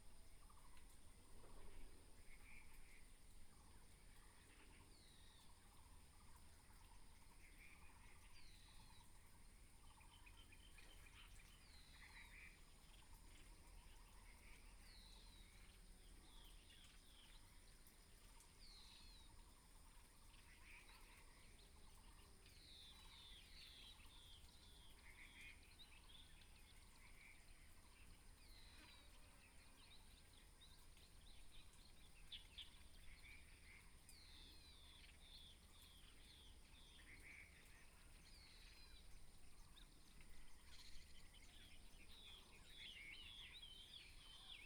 in the morning, Various bird tweets, birds sound, Fly sound, Chicken roar
Binaural recordings, Sony PCM D100+ Soundman OKM II
Taitung County, Taiwan, 14 April 2018, 07:47